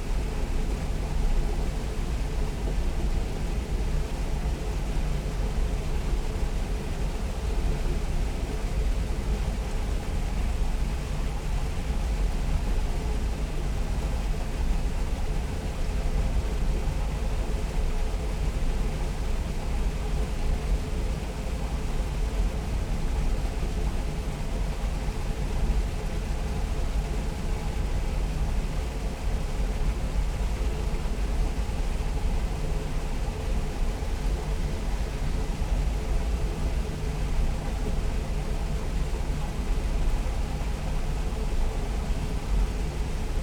{"title": "Berlin Buch, former trickle fields / Rieselfelder - water station, overflow", "date": "2021-10-02 14:42:00", "description": "same spot, recording with normal pressure mics for contrast\n(Sony PCM D50, Primo EM272)", "latitude": "52.67", "longitude": "13.47", "altitude": "57", "timezone": "Europe/Berlin"}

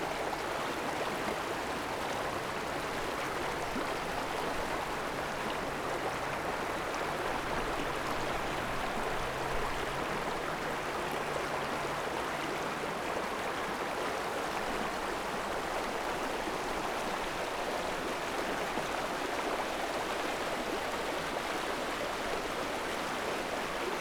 {"title": "Biesdorf, Berlin, Deutschland - river Wuhle flow", "date": "2018-03-09 13:50:00", "description": "Berlin, Wuhletal, river Wuhle flow, near S-Bahn station\n(SD702, SL502 ORTF)", "latitude": "52.51", "longitude": "13.57", "altitude": "41", "timezone": "Europe/Berlin"}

